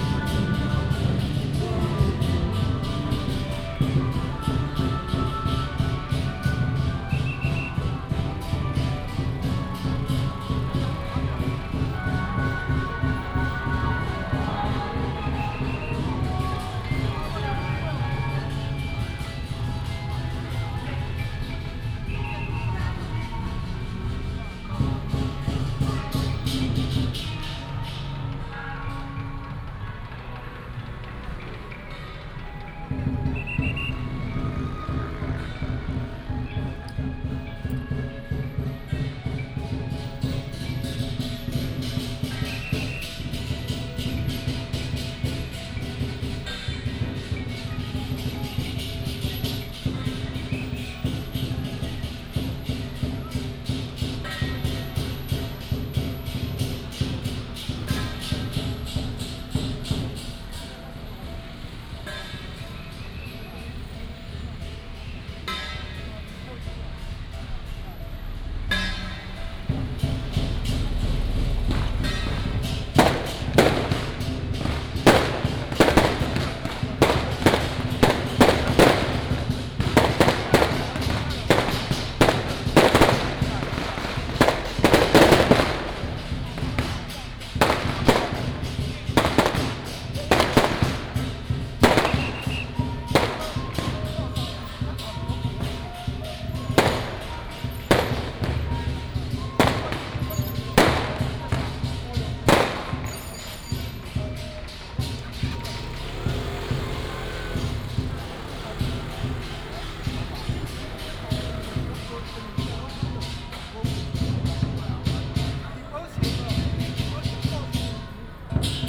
{"title": "Sec., Linsen Rd., 虎尾鎮 - Fireworks and firecrackers", "date": "2017-03-03 14:47:00", "description": "Fireworks and firecrackers, Traffic sound, Baishatun Matsu Pilgrimage Procession", "latitude": "23.70", "longitude": "120.42", "altitude": "27", "timezone": "Asia/Taipei"}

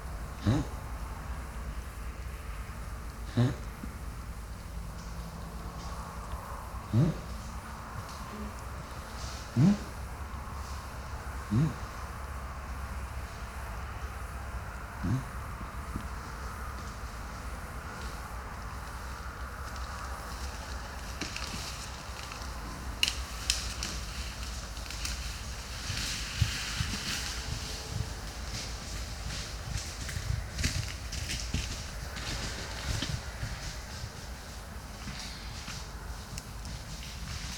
{"title": "Negast forest, Waldteich, Pond, Rügen - Encounter with a boar", "date": "2021-07-24 01:48:00", "description": "Mics are next to a pond. Imagine what´s going on...\nZoom F4 and diy SASS with PUI 5024", "latitude": "54.38", "longitude": "13.28", "altitude": "3", "timezone": "Europe/Berlin"}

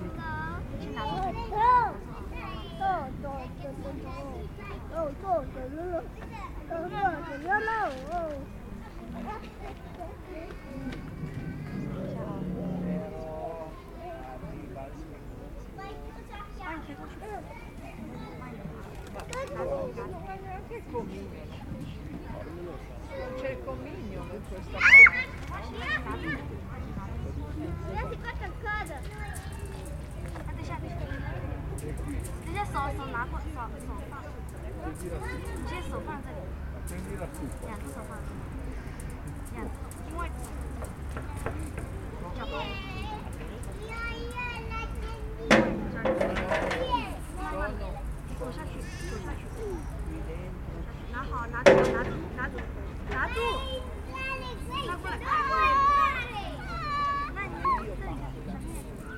{"title": "Jardin des Plantes Joséphine Baker, Rue des Dauphins, Grenoble, France - Jeux d'enfants", "date": "2022-09-11 10:30:00", "description": "Dans le Jardin des Plantes au cours de Paysages Composés organisé par Apnées .", "latitude": "45.19", "longitude": "5.74", "altitude": "218", "timezone": "Europe/Paris"}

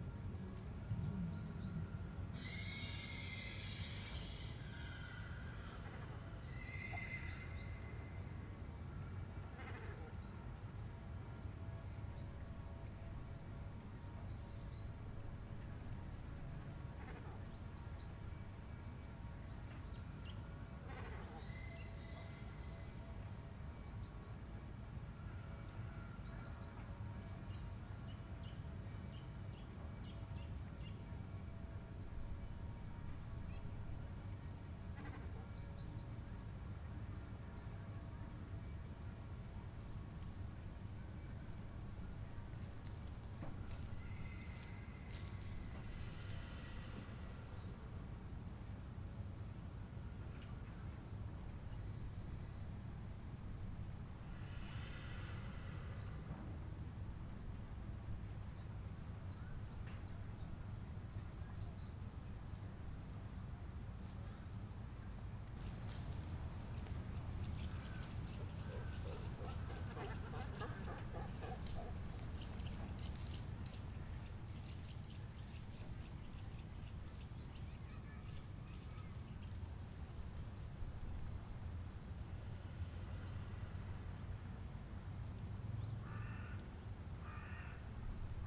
{"title": "Söbrigener Str., Dresden, Deutschland - Comoranes on Dresden Elbe Island", "date": "2020-09-12 11:42:00", "description": "Comoranes on Dresden's Elbe island, paddle wheel steamers, paddle boats and motor boats pass by. Small motorized airplanes fly by and horses neigh at a riding tournament. Crows and other birds can be heard. Recorded with a Zoom H3 recorder.", "latitude": "51.00", "longitude": "13.87", "altitude": "112", "timezone": "Europe/Berlin"}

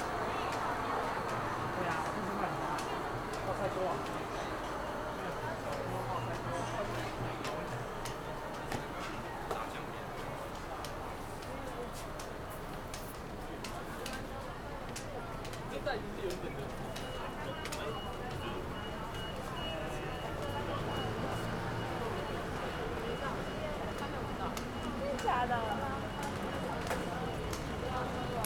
{"title": "Xuchang St., Zhongzheng Dist. - Followed a blind", "date": "2014-01-21 16:56:00", "description": "In the corner of the street, Followed a blind, The visually impaired person is practicing walking on city streets, Zoom H6 Ms + SENNHEISER ME67", "latitude": "25.05", "longitude": "121.52", "timezone": "Asia/Taipei"}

